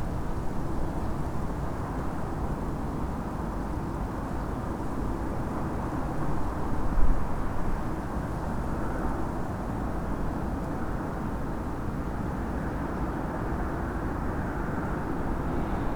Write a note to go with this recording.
the city, the country & me: february 8, 2012